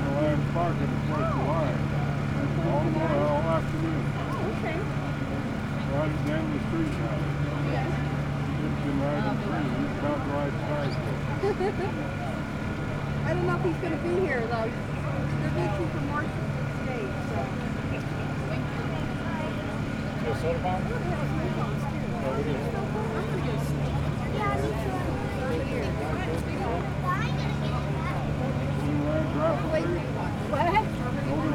neoscenes: Farmers Market ice cream